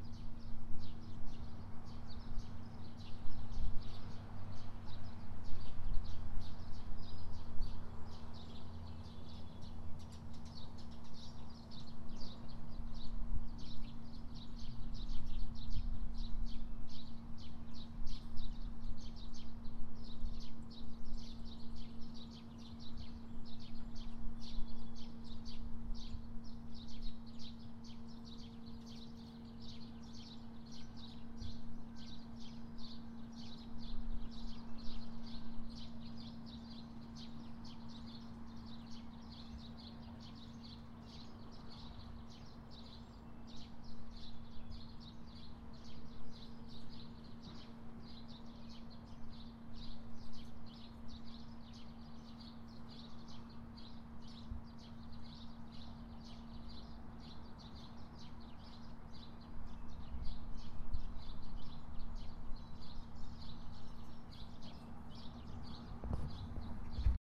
McCollister Blvd, Iowa City, IA, USA - Terry Trueblood entrance
This recording was taken at the entrance to the trail at the Terry Trueblood Recreation Area in Iowa City on a rather gloomy day. The majority of what you hear at this point on the trail will be birds as well as some traffic on the nearby road. This was recorded with a Tascam DR-100MKIII.